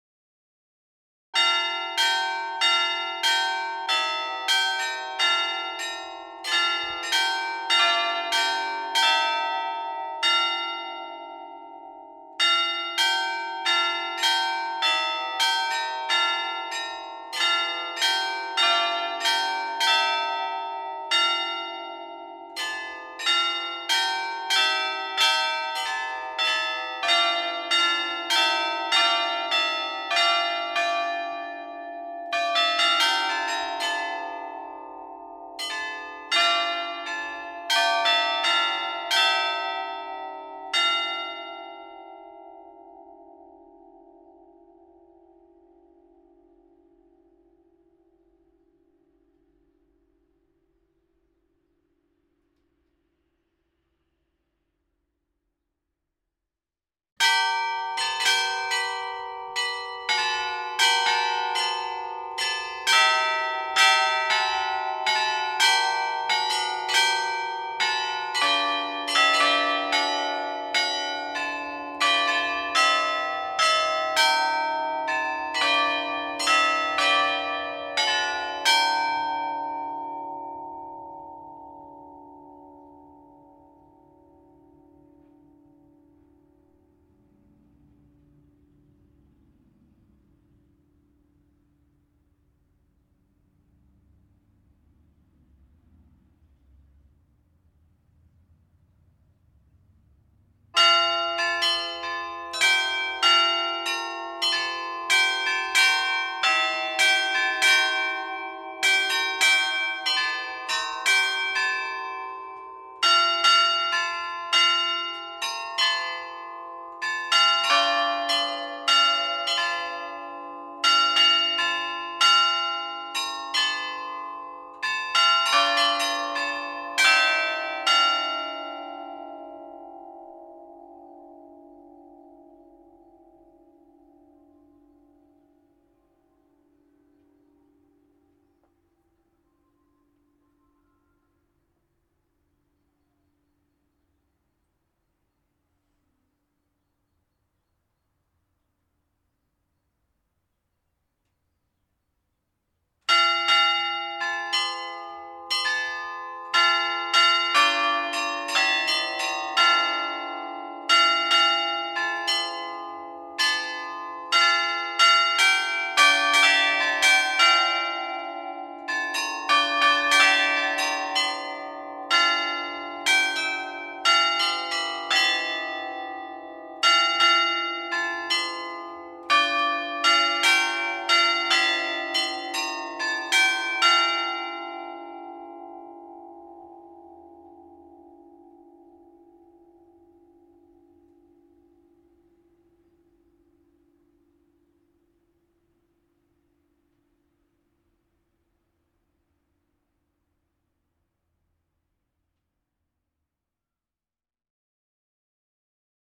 Pl. Faidherbe, Bapaume, France - Carillon de l'hotel de ville de Bapaume
Bapaume (Pas-de-Calais)
Carillon de l'hôtel de ville
ritournelles automatisées